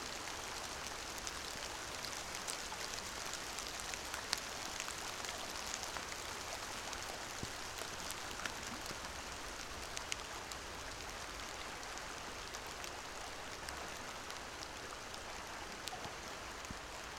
Pačkėnai, Lithuania, rain in the forest
sitting in the forest. drizzle.